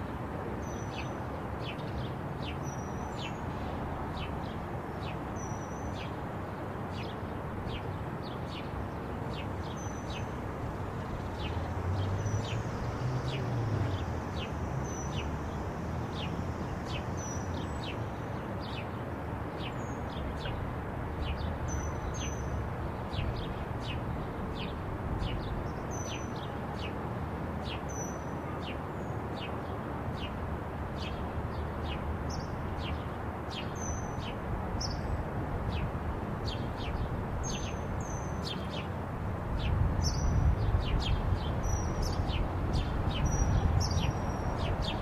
{
  "title": "Gopher + Bird Song, Fishmarket Studios, Calgary",
  "date": "2011-06-05 04:08:00",
  "description": "bird and gopher medley near Fishmarket Studios in Calgary",
  "latitude": "51.05",
  "longitude": "-114.05",
  "altitude": "1043",
  "timezone": "Canada/Mountain"
}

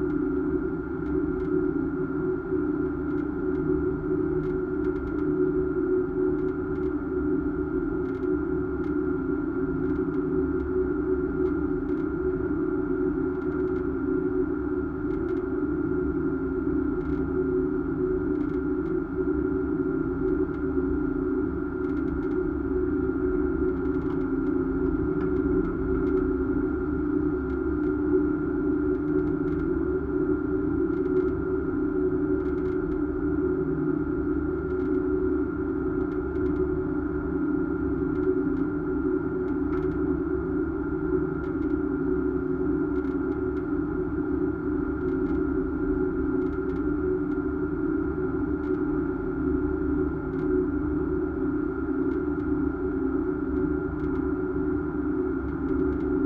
{
  "title": "lake constance - crossing the lake constance by boat",
  "date": "2015-10-01 09:57:00",
  "description": "crossing the lake constance by boat with a contact microphone on it...",
  "latitude": "47.67",
  "longitude": "9.24",
  "altitude": "392",
  "timezone": "Europe/Berlin"
}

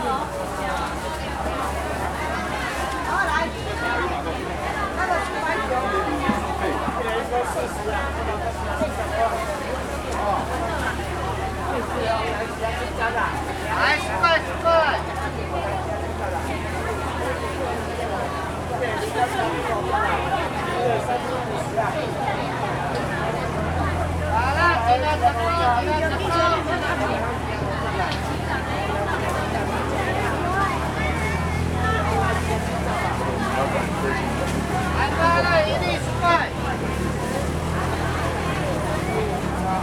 {
  "title": "Ln., Dayong St., Zhonghe Dist., New Taipei City - In the Market",
  "date": "2012-01-21 16:48:00",
  "description": "Traditional market\nSony Hi-MD MZ-RH1 +Sony ECM-MS907",
  "latitude": "24.99",
  "longitude": "121.52",
  "altitude": "14",
  "timezone": "Asia/Taipei"
}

